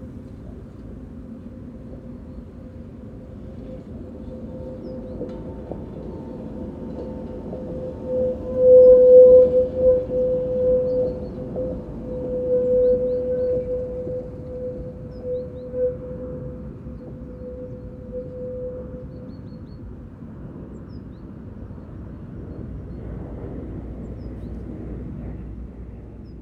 Trams wheels slowly negotiating this tight curve often moan, squeal or screech as they rumble round. It depends on their speed, the weather and temperature and the type of tram. Older one seem more likely to make these sounds but sometime the new one also. This sound can be heard some distance away, from up the nearby hillside for example and is a sonic feature of the area.